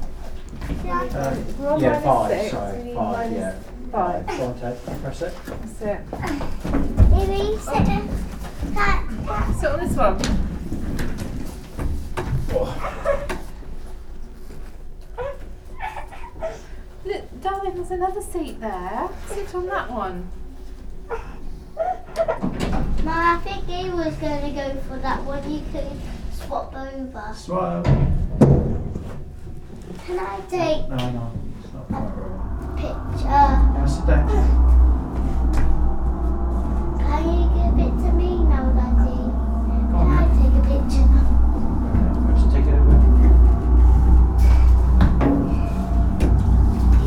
Brussels, Museum of fine arts
Brussels, the elevator at the museum of fine arts.
Bruxelles, l'ascenseur du musée des Beaux-Arts.